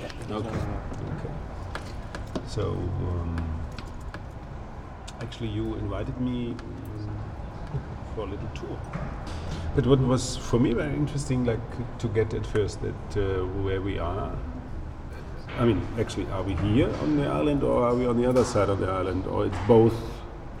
{"title": "copenhagen, overgaden - tower of gentrification", "date": "2011-05-27 18:00:00", "latitude": "55.67", "longitude": "12.59", "timezone": "Europe/Copenhagen"}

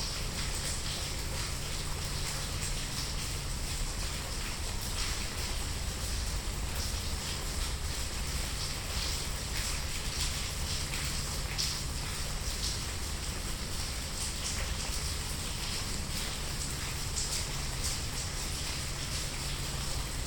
{"title": "Venice, Province of Venice, Italy - piogga regen rain", "date": "2012-04-04 16:03:00", "description": "recorded in an angle of aisle on the San Michele cemetery; aufgenommen im Seitengang der Kirche des Friedhofs von Venedig San Michele; registrato sull isola di san michele, in un angolo del chiostro", "latitude": "45.45", "longitude": "12.35", "altitude": "1", "timezone": "Europe/Rome"}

